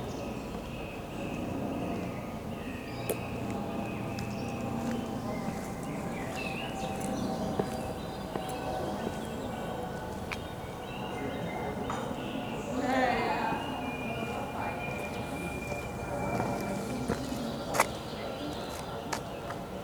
Eremitage, Bayreuth, Deutschland - neues Schloss
Eremitage, neues Schloss - olympus ls-5
Bayern, Deutschland, May 2013